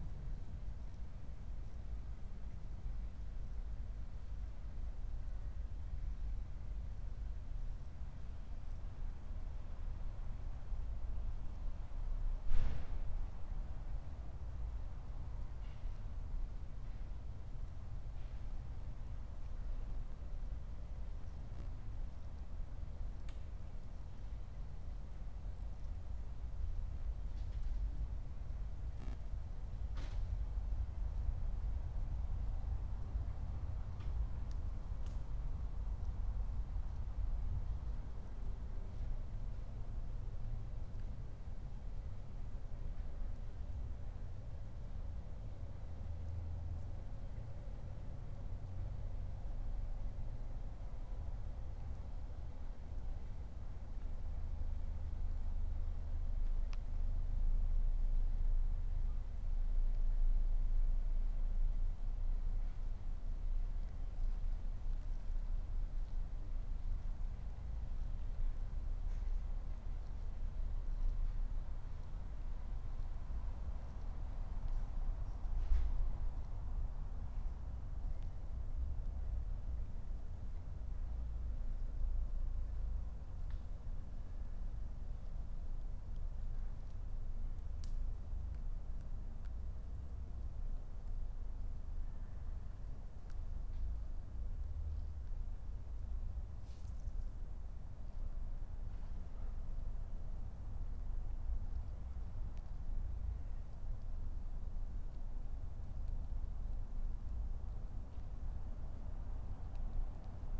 23:14 Berlin, Neukölln
(remote microphone: Primo EM272/ IQAudio/ RasPi Zero/ 3G modem)
Deutschland, 18 July 2020